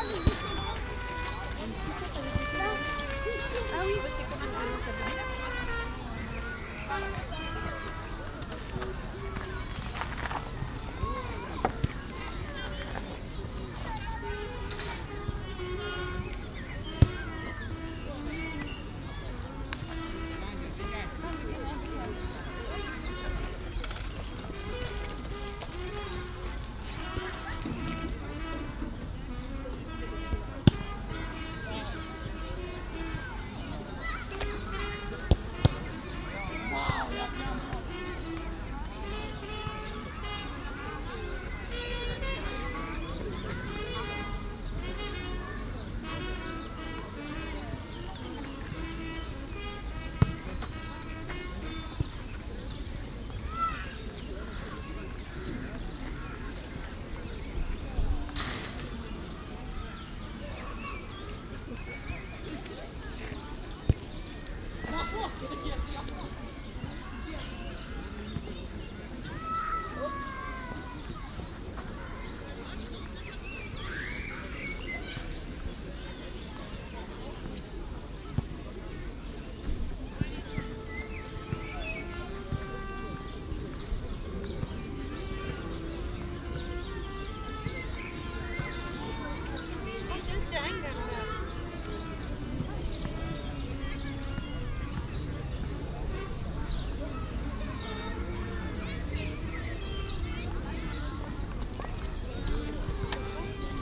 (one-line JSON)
{"title": "Bd de l'Orangerie, Strasbourg, Frankreich - park on the first of may", "date": "2022-01-18 03:05:00", "description": "near a children's playground, trumpeters in the background, walkers, may 1st . (sennheiser ambeo smart headset)", "latitude": "48.59", "longitude": "7.77", "altitude": "137", "timezone": "Europe/Paris"}